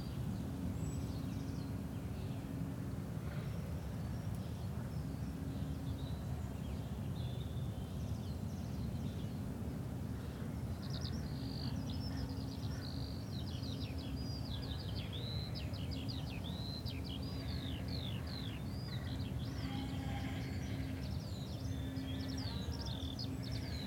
...a continuation of the earlier recording made in this beautiful, peaceful field of sheep and birds.
Reading, UK, 1 May 2017, 08:51